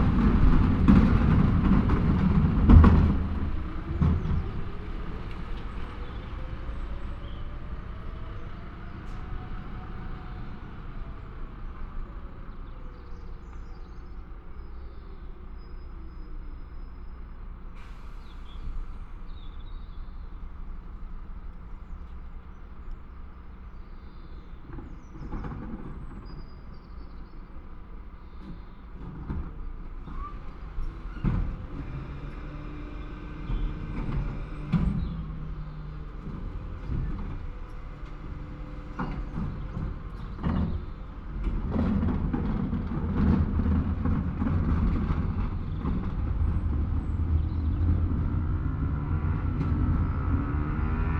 Every 2nd Thursday garden rubbish is collected by a special truck from large wheeled bins left out in the street.